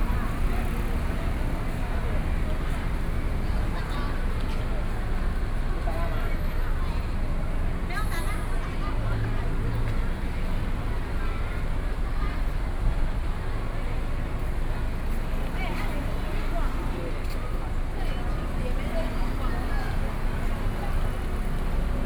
湯圍溝溫泉公園, Jiaosi Township - Small Square
Tourist, Traffic Sound, Various shops sound
Sony PCM D50+ Soundman OKM II